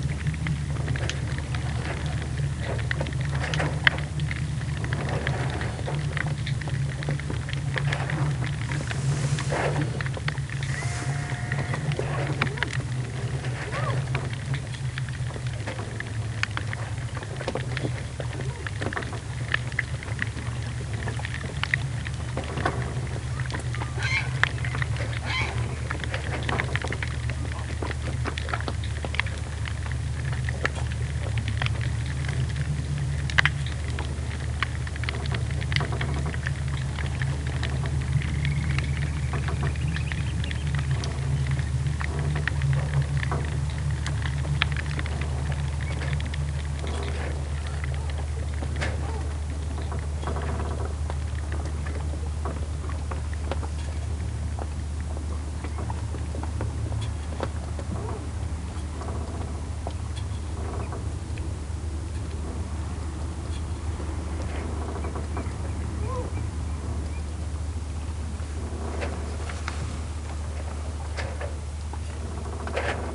Muck, Small Isles, Scotland - Above, Submerged and Within Muck Bay (aerial & hydrophone)
3-channel recording with a Sound Devices MixPre-3, a mono Aquarian Audio h2a hydrophone and a stereo pair of DPA 4060s
UK, 3 July